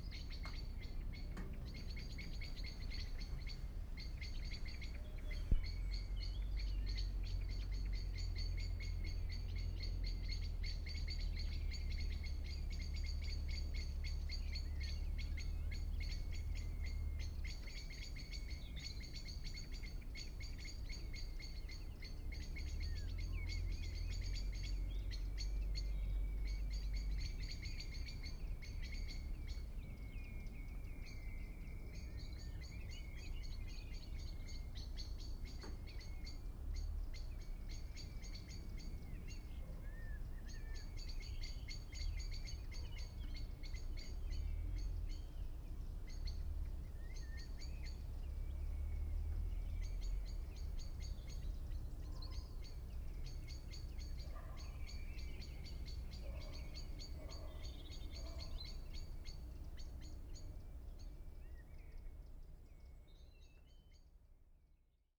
Port Carlisle, Cumbria, UK - Birds from window
Birds from window in evening. ST350 mic. Stereo decode
United Kingdom, European Union, 25 April 2013